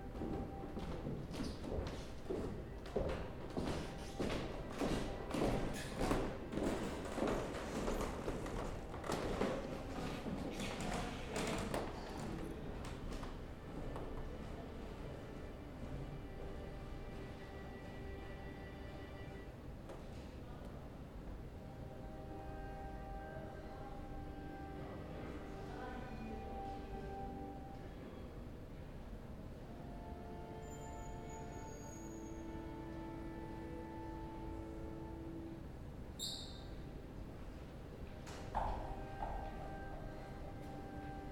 [Zoom H4n Pro] Creaking wooden ceiling/floor of the room above.
Mechelen, Belgium